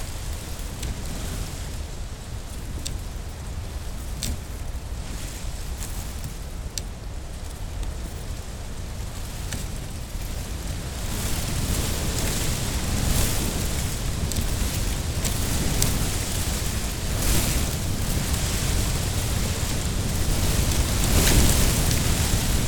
Royal National Park, NSW, Australia - coastal grasslands in the wind. near burning palms.